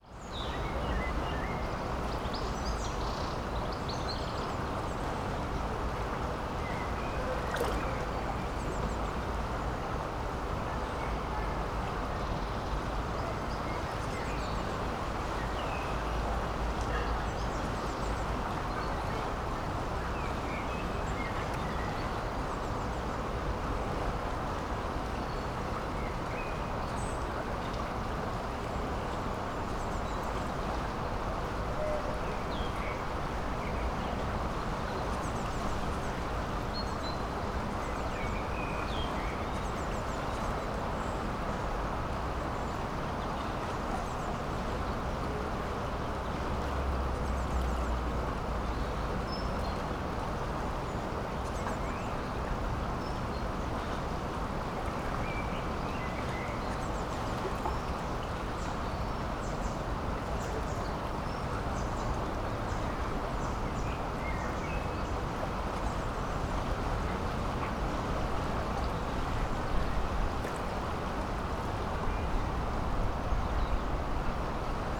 Woodin's Way, Oxford - on bridge over Castle Mill Stream
sound of water and city ambience heard on bridge over Castle Mill Stream
(Sony PCM D50)
Oxford, Oxfordshire, UK, 12 March 2014, 13:05